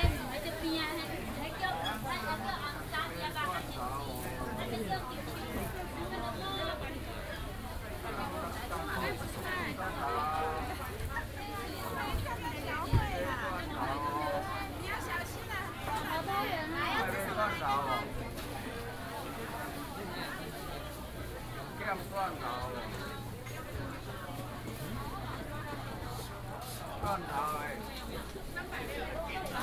豐原公有零售市場, Fengyuan Dist., Taichung City - Walking in the market
Very large indoor market, Walking in the market